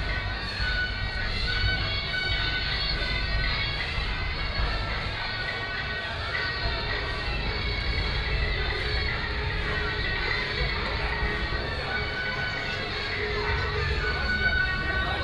Yi 2nd Rd., 基隆市 - Variety show
Festivals, Walking on the road, Variety show, Keelung Mid.Summer Ghost Festival
Keelung City, Taiwan, 16 August 2016, 20:20